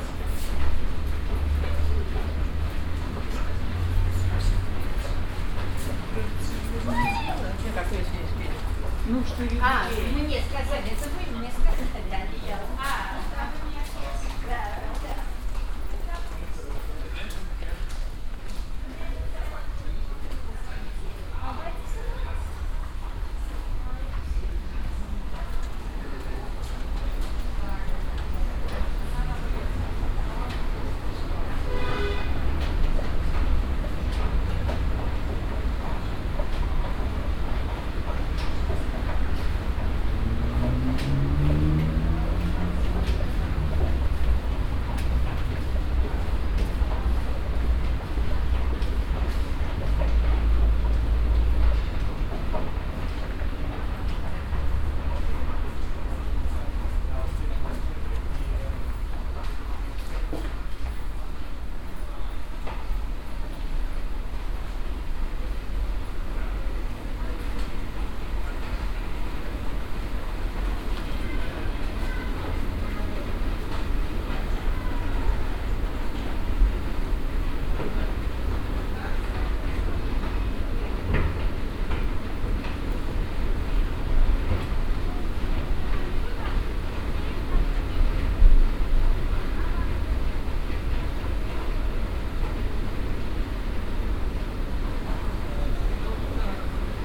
cologne, kalk, kalker hauptstr, subway
afternoon in the footpass subway, moving staircases, people
soundmap nrw social ambiences/ listen to the people - in & outdoor nearfield recordings